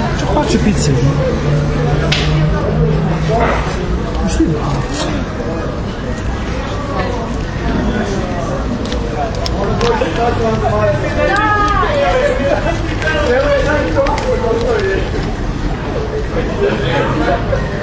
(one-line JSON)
{"title": "Zeleni venac danju, Belgrade - Zeleni venac nocu, Belgrade", "date": "2011-06-15 23:05:00", "latitude": "44.81", "longitude": "20.46", "altitude": "105", "timezone": "Europe/Belgrade"}